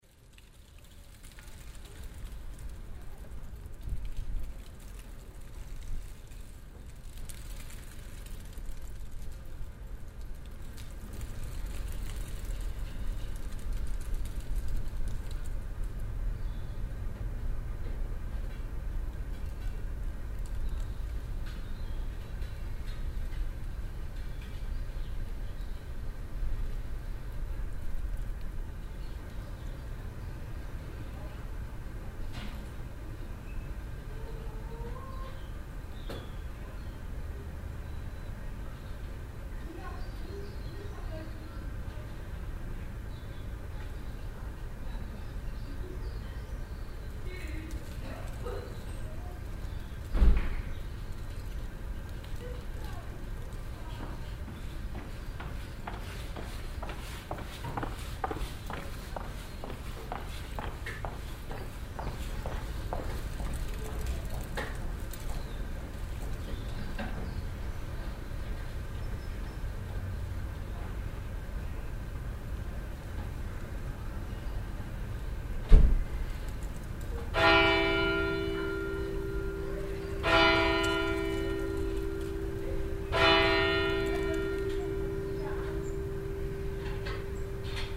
wülfrath, kirchplatz, atmo in kleiner gasse - wuelfrath, kirchplatz, atmo in kleiner gasse
windspiel mit blättern, schritte und leise stimmen hinter fenstern, eine alte tür
project: social ambiences/ listen to the people - in & outdoor nearfield recordings